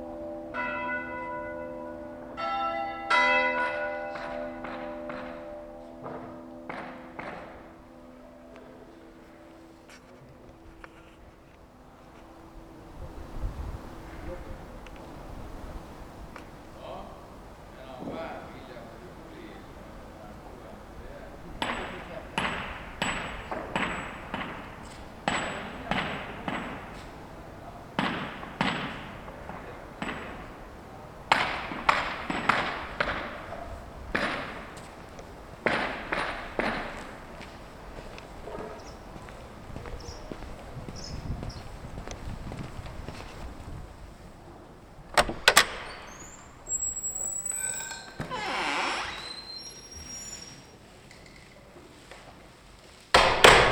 Tallinn, Vene
St. Peter and Paul Roman Catholic Church (Rooma Katoliku Kirik), bells, workers lay cobblestones, church door